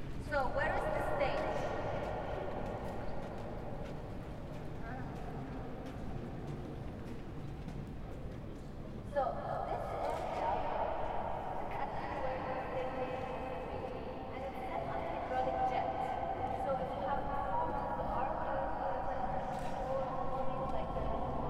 El Maarad, Tarablus, Libanon - Inside the unfinished Niemeyer Dome
Recorded with a PCM D-100